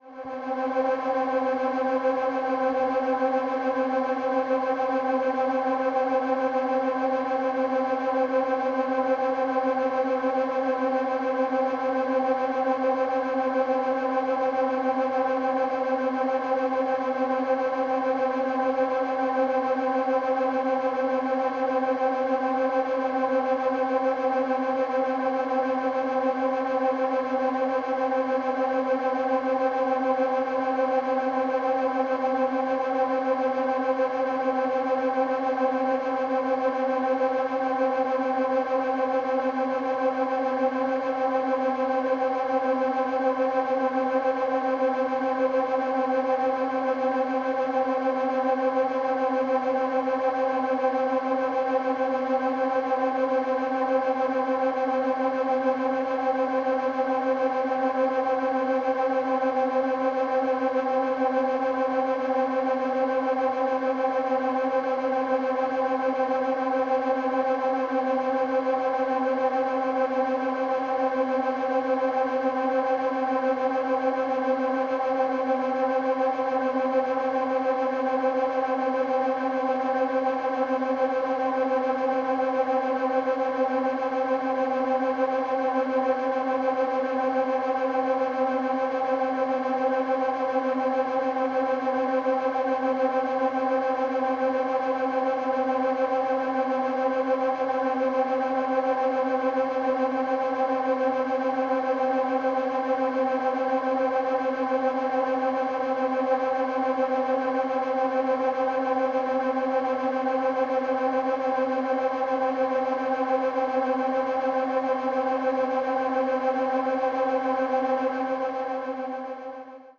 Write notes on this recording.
Sounds of a Dell 10Gbit networking switch, recorded with self made contact microphones, (Sony PCM D50, DIY contact mics)